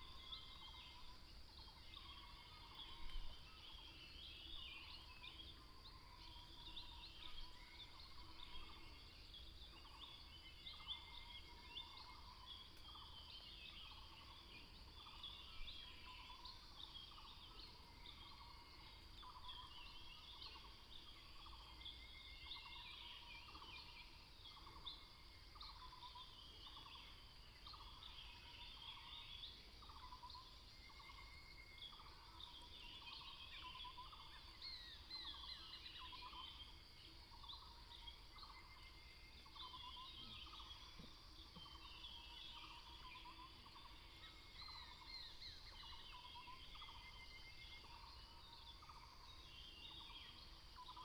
Bird sounds, Crowing sounds, Morning road in the mountains